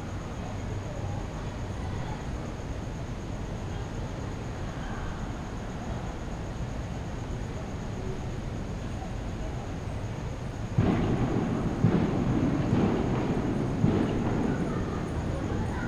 neoscenes: on the way to Darling Harbor